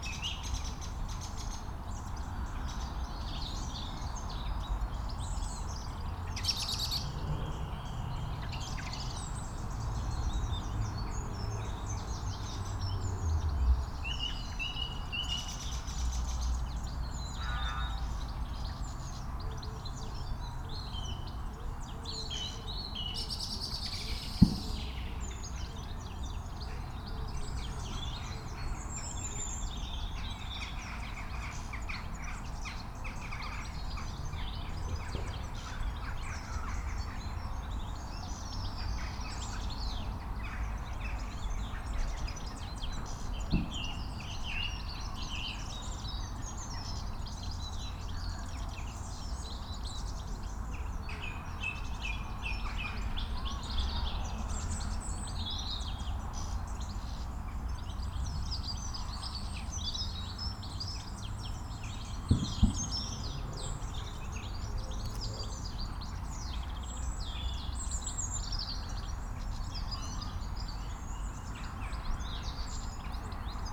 community garden ... kirkbymoorside ... lavalier mics clipped to sandwich box ... bird calls ... song from ... goldcrest ... blackbird ... song thrush ... robin ... jackdaw ... crow ... wood pigeon ... collared dove ... dunnock ... coal tit ... great tit ... siskin ... chaffinch ... background noise ...
Kirkbymoorside, York, UK, 5 March 2019